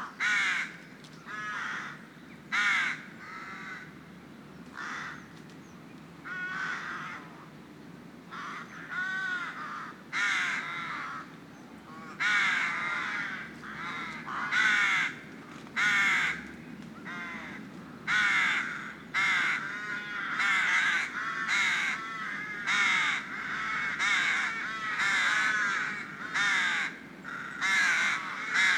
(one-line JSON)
{"title": "Pl View Rd, St Mawes, Truro, UK - Rookery", "date": "2018-03-22 12:29:00", "description": "I got the ferry from Falmouth to St Maws in a stiff westerly wind, but on landing and only after a short walk I came across a lovely sheltered valley with a large Rookery in it. The sound of the sea in the background along with a few seagulls help to set the scene. Sony M10 built-in mics.", "latitude": "50.16", "longitude": "-5.02", "altitude": "37", "timezone": "Europe/London"}